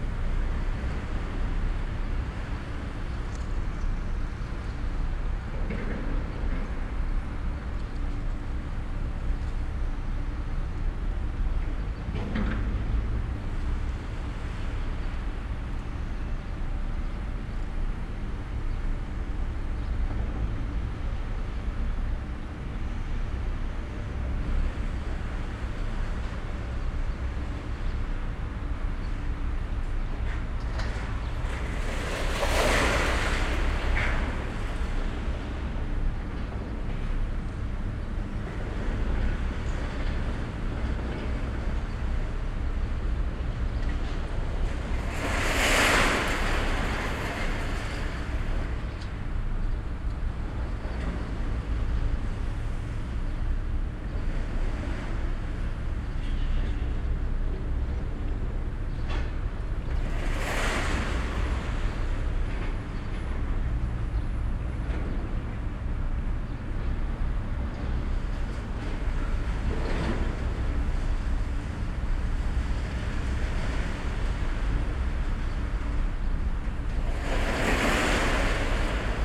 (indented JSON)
{
  "title": "Oberhafen, Neukölln, Berlin - industrial ambience",
  "date": "2013-05-30 14:45:00",
  "description": "industrial ambience at Oberhafen, Neukölln, between srapyard and public cleansing service building\n(Sony PCM D50, DPA4060)",
  "latitude": "52.47",
  "longitude": "13.45",
  "altitude": "33",
  "timezone": "Europe/Berlin"
}